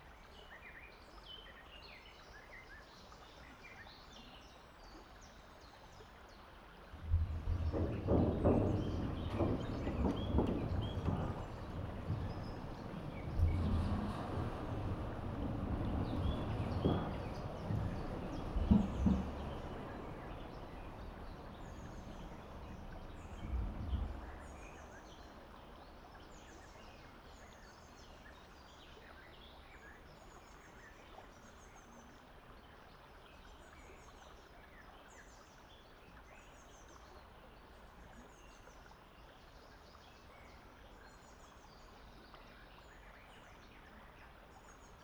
Around Noon on a hot day in a shady spot by the river Tauber. Recorded with an Olympus LS 12 Recorder using the built-in microphones .Recorder was placed underneath the bike-path-bridge. The soft murmur of the river can be heard and numerous birds singing and calling. Bicycles and small motorbikes passing over the bridge. Bikers talking. A local train passing by on the railway next to the river. In the end a person with a dog appears to cool down in the shallow water.